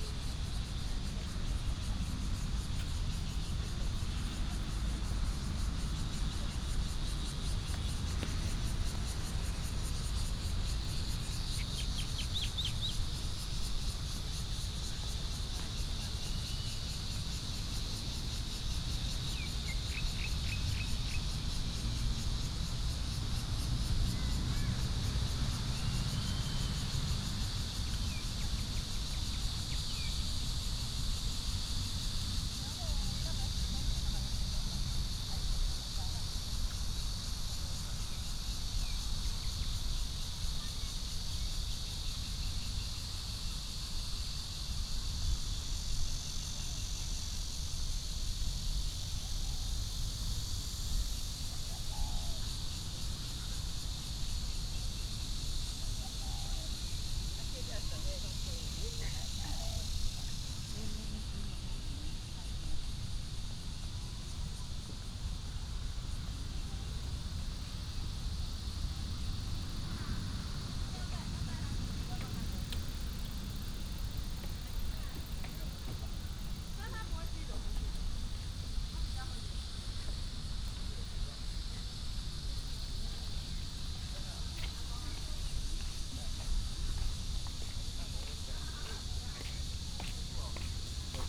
陽明運動公園, Taoyuan Dist. - Walking in the park
Walking in the park, Cicadas, sound of birds, Footsteps, Traffic sound
15 July 2017, 18:50